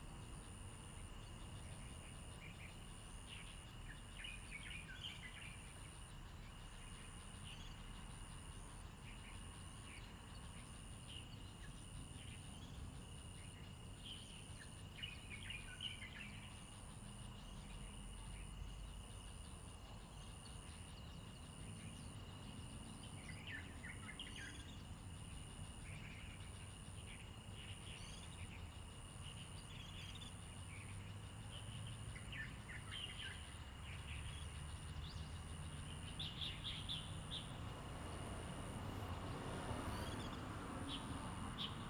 National Chi Nan University, Taiwan - Bird calls
Bird calls
Zoom H2n MS+XY